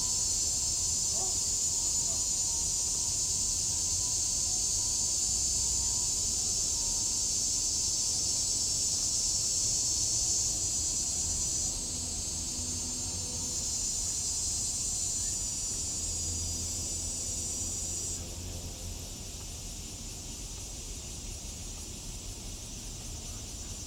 Cicada sounds, under the tree, Insect sounds, Traffic Sound, MRT trains through, Bicycle sound
Zoom H2n MS+XY +Spatial Audio